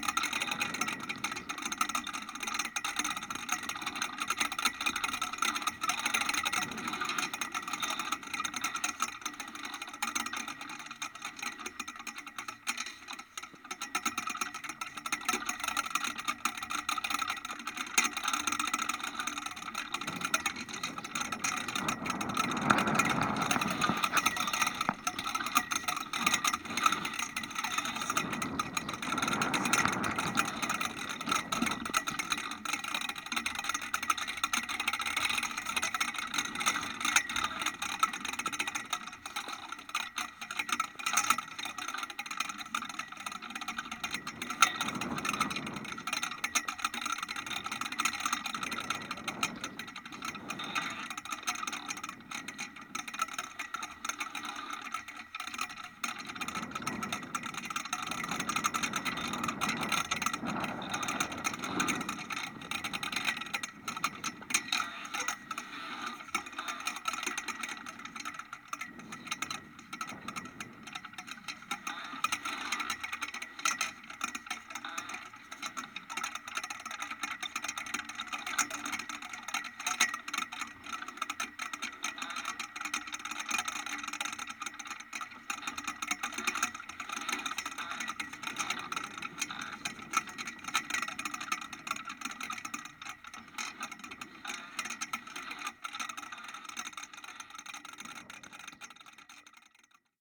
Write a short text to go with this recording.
flagstaff, contact mic recording, the city, the country & me: november 25, 2012